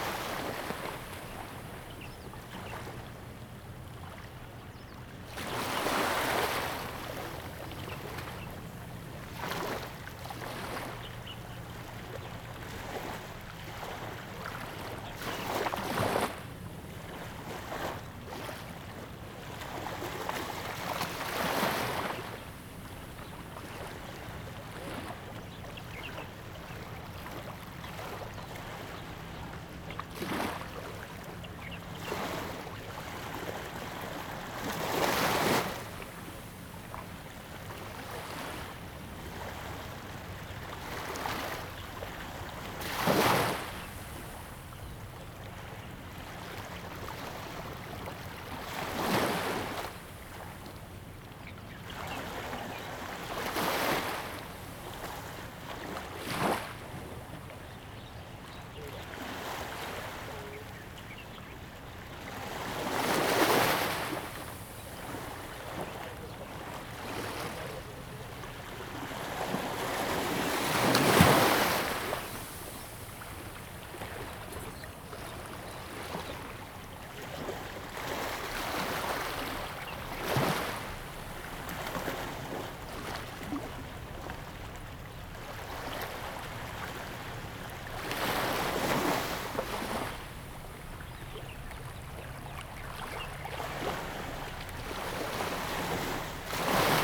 六塊厝漁港, Tamsui Dist., New Taipei City - Small fishing pier
Sound of the waves, Small fishing pier
Zoom H2n MS+XY
New Taipei City, Tamsui District, 16 April 2016